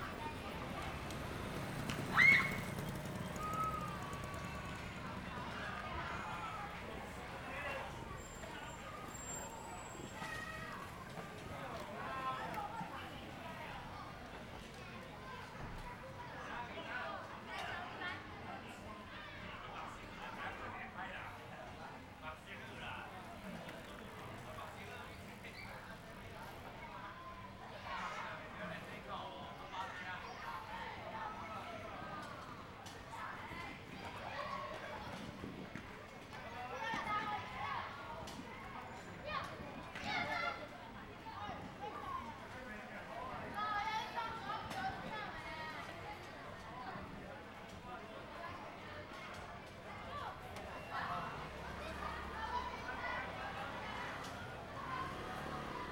蕃薯村, Shueilin Township - Hamlet
Traditional New Year, The plaza in front of the temple, Very many children are playing games, Firecrackers, Motorcycle Sound, Zoom H6 M/S
Shuilin Township, 雲151鄉道, January 2014